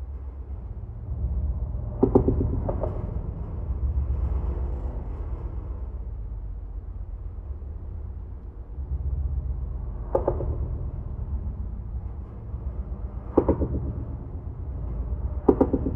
Garrison, NY, USA - Route 9D bridge
Sound of cars passing on Route 9D bridge.
Recorded placing the microphone on the bridge's water pipe.